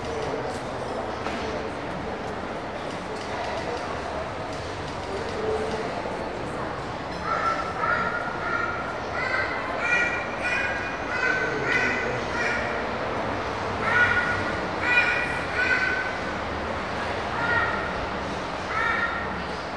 inside of one of the budapest shopping arcades, steps and a child
international city scapes and social ambiences